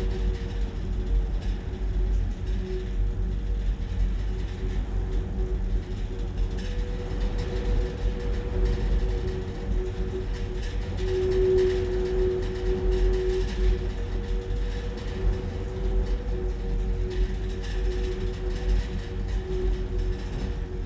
This a desolate spot, army firing ranges on grey shingle banks that reach to the power station. There is a metal fence with occasional steel gates bearing warning signs of danger to life, noise, unexploded ordinance. Red flags fly to let you know when the ranges are being used. The wind often blows and today is strong enough to make the wire of the gate rattle and sing eerily. 24/07/2021
England, United Kingdom, July 2021